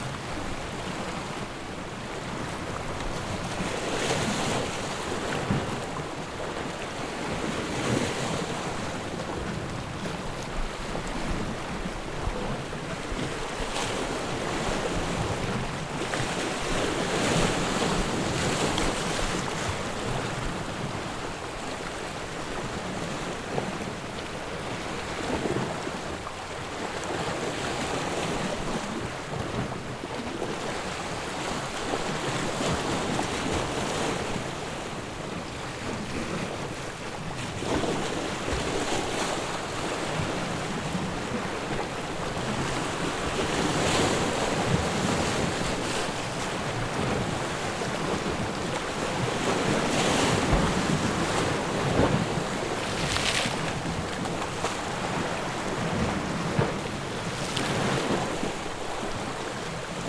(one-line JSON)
{"title": "Carrer Costa den Josep Macià, 5, 17310 Lloret de Mar, Girona, Испания - Sea hitting big rock", "date": "2018-09-06 16:25:00", "description": "Sea hitting a big rock plato, splashes, rare distance spanish voices.", "latitude": "41.70", "longitude": "2.86", "timezone": "Europe/Madrid"}